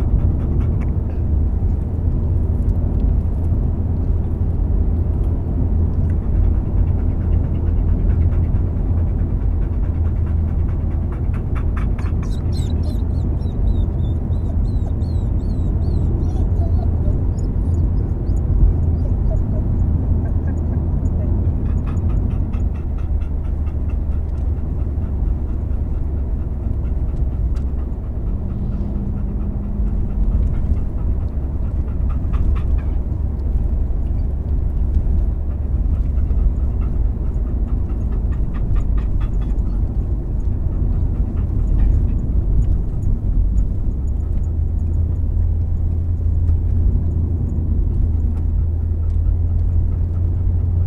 Filey, UK - the dog goes to the beach ...
The dog goes to the beach ... very occasionally we have a dog we take to the beach ... it's a rarity for her ... she gets excited and whimpers ... whines ... trills ... chirrups etc ... the whole way in the back of the car ... recorded with Olympus LS 11 integral mics ...